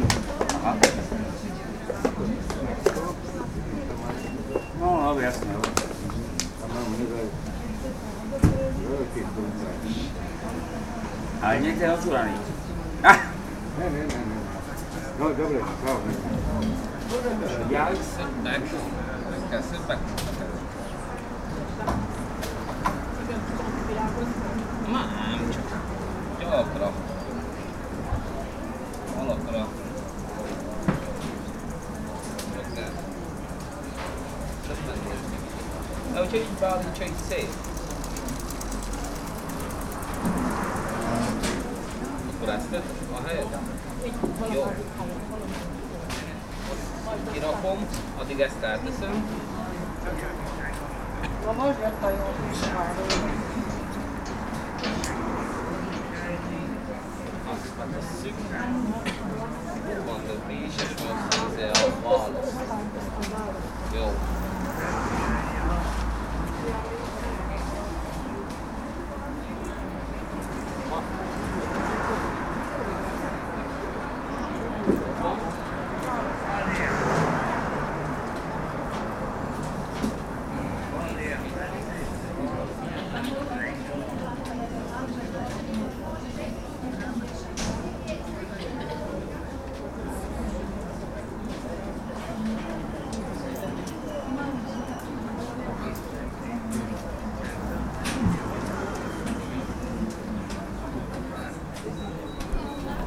bratislava, market at zilinska street - market atmosphere IX
recorded with binaural microphones
Bratislava, Slovakia, October 2013